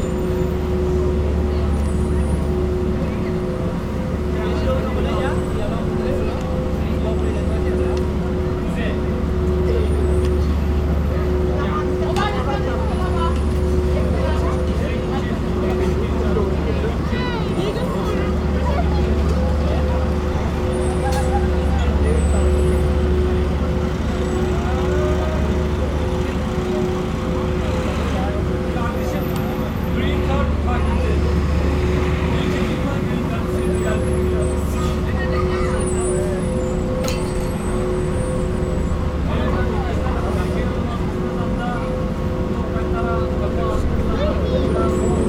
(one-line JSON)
{"title": "West 45th Street, W 46th St, New York, NY, United States - The Hum, Max Neuhaus’ Times Square Sound Installation", "date": "2019-08-28 01:42:00", "description": "Max Neuhaus’ Times Square sound installation.\nZoom h6", "latitude": "40.76", "longitude": "-73.99", "timezone": "America/New_York"}